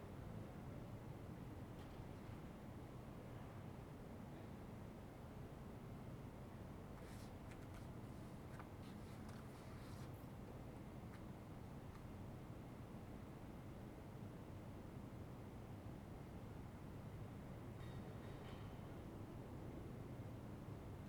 Ascolto il tuo cuore, città. I listen to your heart, city. Several chapters **SCROLL DOWN FOR ALL RECORDINGS** - Round midnight with sequencer but without LOL
"Round midnight with sequencer but without LOL in background in the time of COVID19" Soundscape
Chapter CXX of Ascolto il tuo cuore, città. I listen to your heart, city
Tuesday, July 27th – Wednesday, July 28th 2020, four months and seventeen days after the first soundwalk (March 10th) during the night of closure by the law of all the public places due to the epidemic of COVID19.
Start at 11:47 p.m. end at 00:## a.m. duration of recording 20’14”